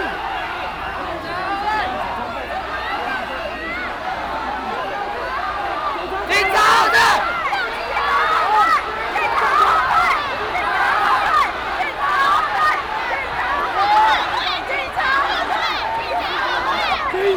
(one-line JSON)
{"title": "中正一分局, Taipei City - ' Passing ' protests", "date": "2014-04-11 18:51:00", "description": "A lot of students and people in front of the police station to protest police unconstitutional, Traffic Sound, Students and people hands in the air and surrounded by riot police, Protest against police chief", "latitude": "25.04", "longitude": "121.52", "altitude": "18", "timezone": "Asia/Taipei"}